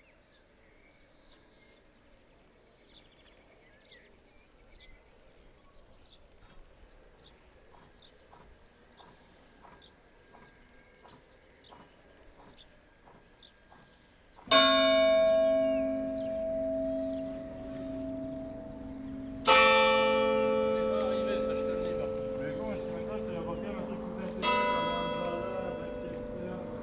Mellery, Villers-la-Ville, Belgique - Mellery bells
In the small village of Mellery, sound of the bells ringing. This is an uncommon manner to ring the bells, according to liturgy it means nothing.
This small village is the only one in Belgium to have a called "Hell road" and a "Paradise road" !